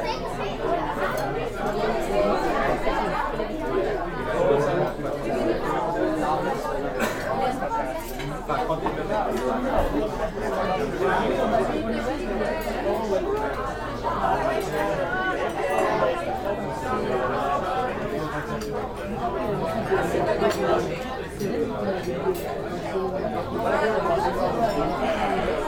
Mortagne. Restaurant terrace ambience
Harbour restaurant terrace. Ambience
Mortagne-sur-Gironde, France